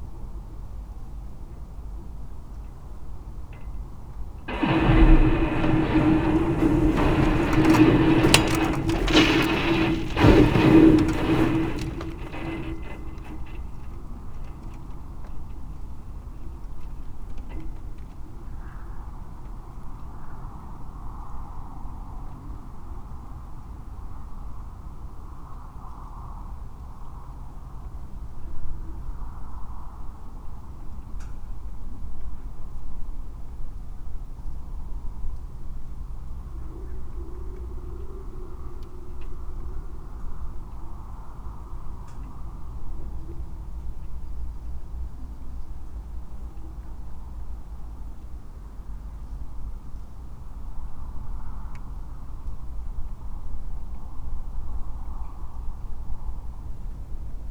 얼음에 갇힌 부두 pier stuck in the ice
This winter the entire surface of Chuncheon lake froze over substantially for several weeks.
강원도, 대한민국